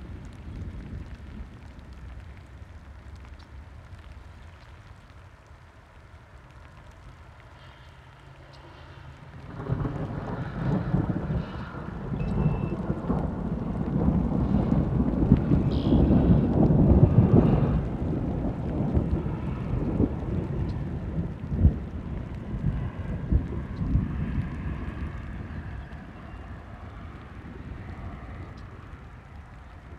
Illinois, USA - Thunderstorm and rain in a field in Illinois, USA
In a field in Illinois during a thunderstorm : thunderclap, thunder and rain, with light trafic in background.
DeKalb, IL, USA